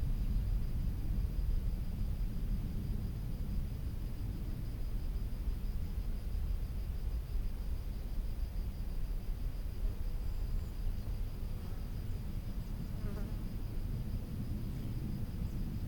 Unnamed Road, Somma lombardo VA, Italy - S. Maria Maddalena Bells, recorded from the woods
Bells from the Church of S, Maria Maddalena, recorded from a trail in the woods. Birds chirping and insect buzzing can be heard, along with a plane at low altitude passing by. Recorded with an Olympus LS-14 resting on the ground.
Lombardia, Italia